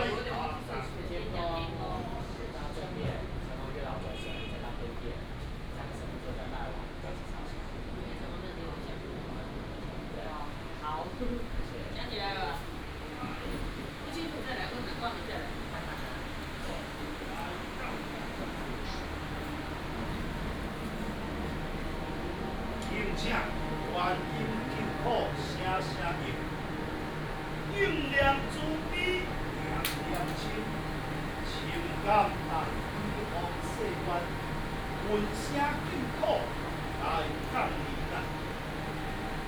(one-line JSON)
{"title": "大稻埕霞海城隍廟, Taipei City - conduct a religious rite", "date": "2017-04-10 16:48:00", "description": "conduct a religious rite, Traffic sound, In the temple", "latitude": "25.06", "longitude": "121.51", "altitude": "12", "timezone": "Asia/Taipei"}